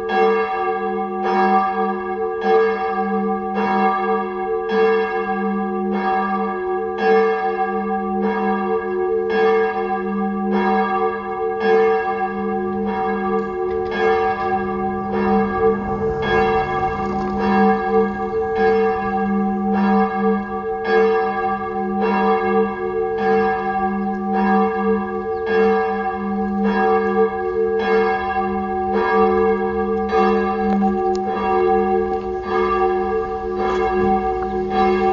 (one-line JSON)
{"title": "Kirchenglocken am Herrfurthplatz", "description": "Das Glockenläuten der Genezarethkirche am Herrfurthplatz. Aufgrund der konzentrisch aufgebauten Umgebung (die kreisförmige Architektur trägt sich in letzter Konsequenz bis in die Winkel aller Hinterhöfe der umstehenden Häuser) eine gute Resonanz. Wer sich fragt, wo eigentlich der Kirchturm geblieben ist, der findet die Antwort in der unmittelbaren Nähe der Landebahn des Flughafens Tempelhof. Der Turm stand einfach ganz blöd im Weg.", "latitude": "52.48", "longitude": "13.42", "altitude": "58", "timezone": "Europe/Berlin"}